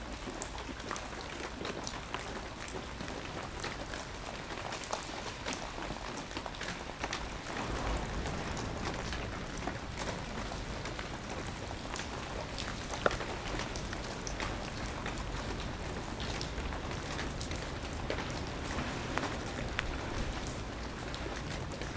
Mont-Saint-Guibert, Belgique - Very bad weather
A very bad weather in an abandoned factory. Microphones are hidden in a mountain of dusts and it's raining raining raining...